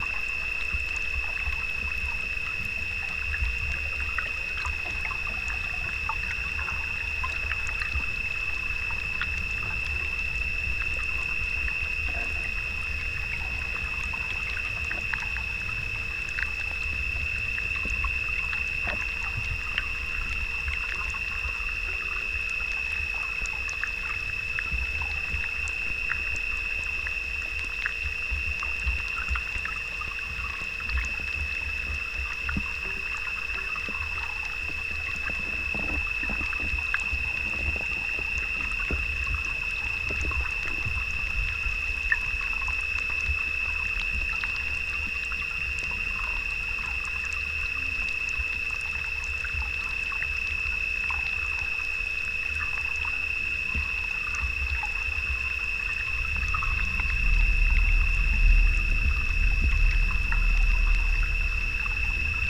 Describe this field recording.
Exploración del pantano realizada con hidrófonos. Un zumbido agudo que parece provenir de la maquinaria situada en caseta junto a la orilla es el sonido más omnipresente bajo la superficie.